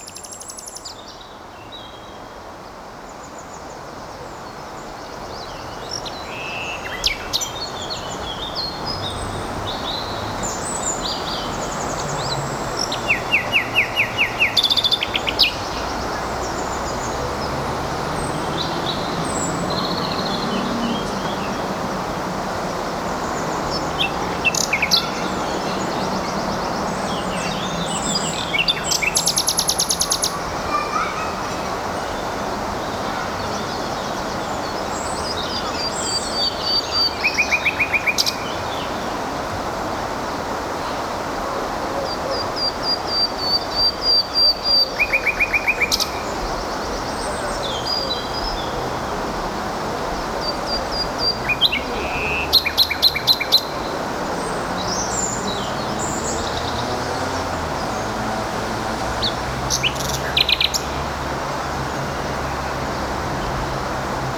Manlleu, España - El Ter
El río Ter nace en Ulldeter a 2.480 metros de altitud al pie de un circo glaciar en la comarca pirenaica del Ripollés, Cataluña (España), muy cerca de la población de Setcases y, después de una longitud de poco más de 200 km, desemboca en el Mar Mediterráneo aguas abajo de Torroella
20 June, 12:17